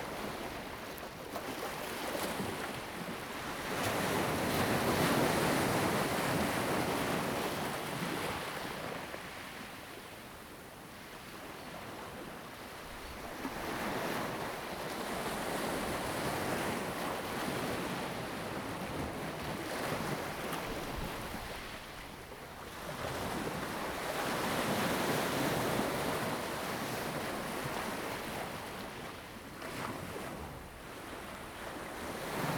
In the dock, Waves and tides
Zoom H2n MS +XY
Lanyu Township, Taitung County, Taiwan, 29 October